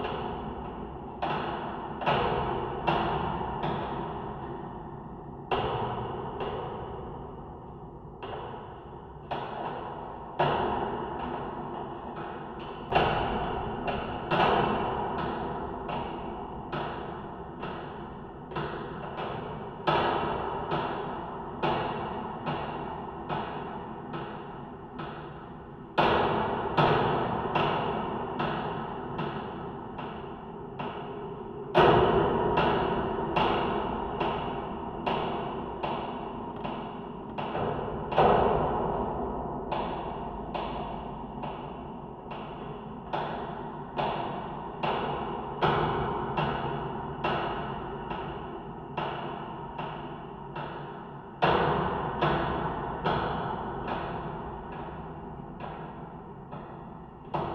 Wind in a construction barrier, an old thread hits the grid. Audiotalaia contact microphones.